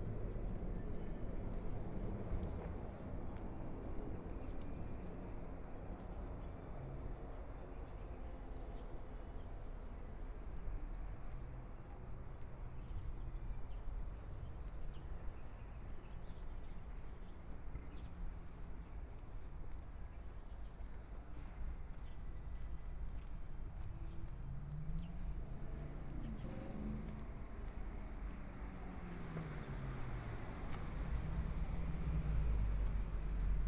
walking on the small Road, Walking in the direction of the airport, Aircraft flying through, Traffic Sound
Binaural recordings, ( Proposal to turn up the volume )
Zoom H4n+ Soundman OKM II